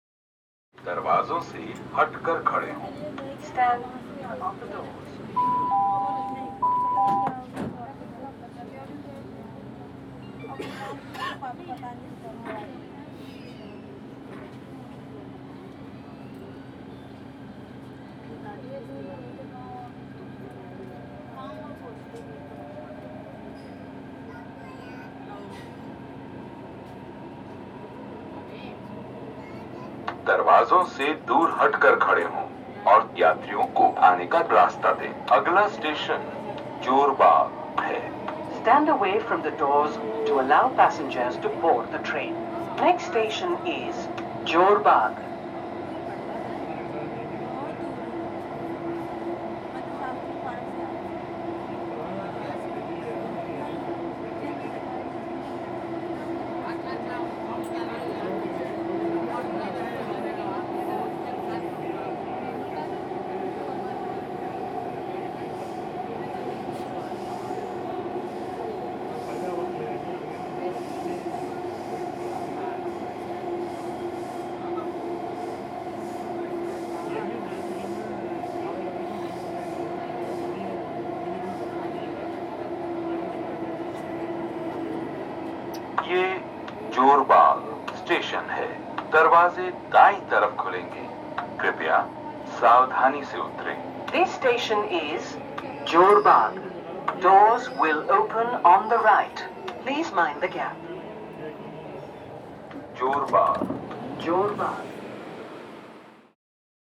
Jor Bagh station, Civil Colony, BK Dutt Colony, New Delhi, Delhi, India - 11 Jor Bagh metro station
Announcements recordings in a metro car at Jor Bagh station.
Zoom H2n + Soundman OKM